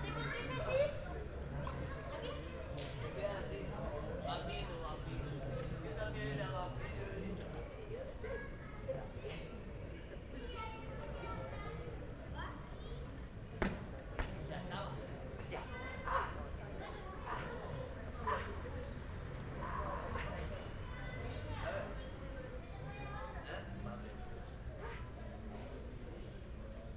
Las Palmas, Gran Canaria, evening